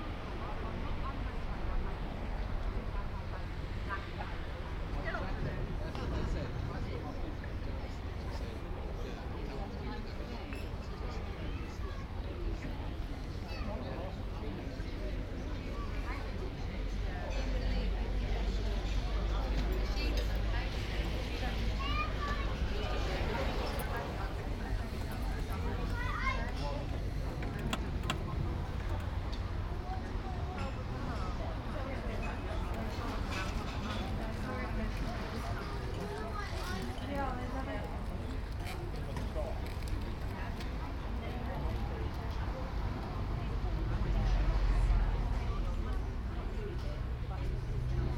The Leas, Folkestone, Regno Unito - GG FolkestoneLeasTerrace 190524-h13-35
May 24th 2019, h 13:35. Standing on Folkestone Leas Terrace, short walking around, then walking east. Binaural recording Soundman OKMII
Folkestone, UK, May 2019